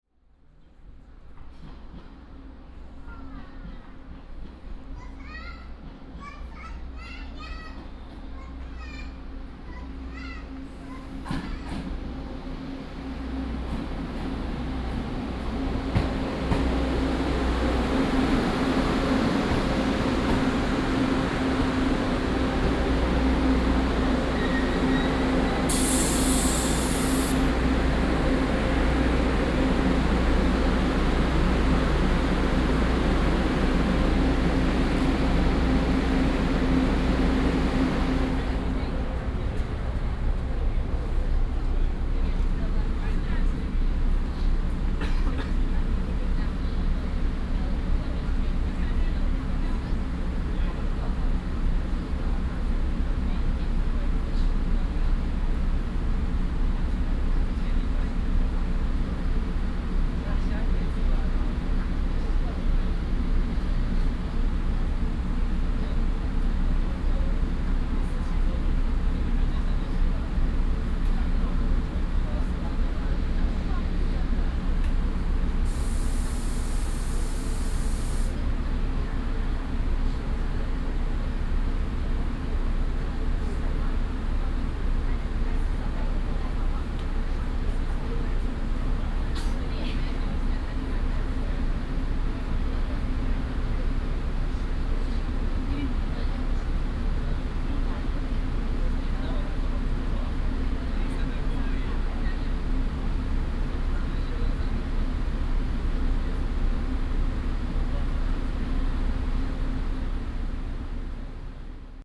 Zhudong Station, 新竹縣竹東鎮 - The train arrives
At the station platform, The train arrives